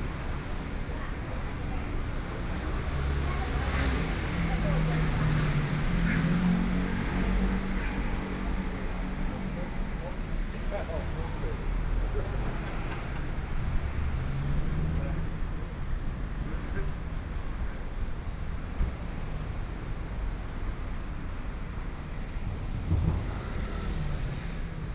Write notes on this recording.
walking on the Road, Various shops voices, Traffic Sound, Please turn up the volume a little. Binaural recordings, Sony PCM D100+ Soundman OKM II